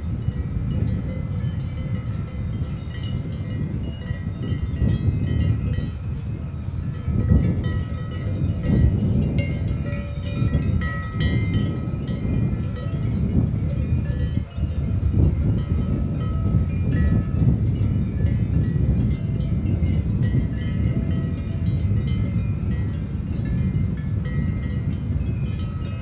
Feldberg, Bismarckdenkmal - cowbells
as I approached the place all i could notice were cow bells in dense fog. within the few minutes of recording the whole hill slope cleared of the fog and dozens of cows grazing were to be seen in the distance.